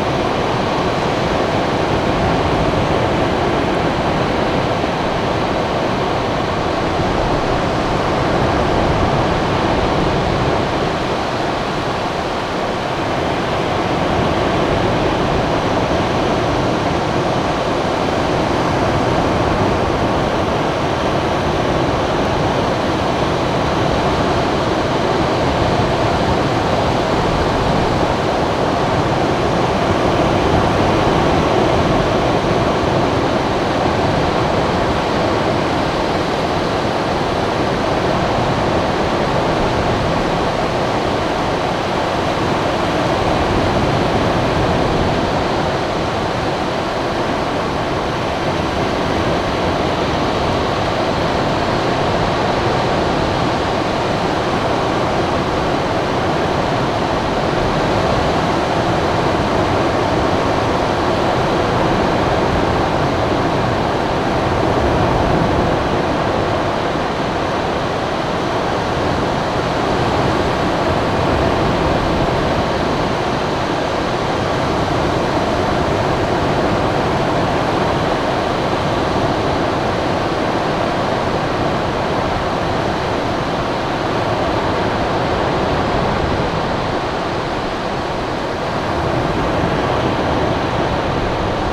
Very large waves crashing in after sunset. Telinga stereo parabolic mic with Tascam DR-680mkII recorder.
15 August 2015, 20:30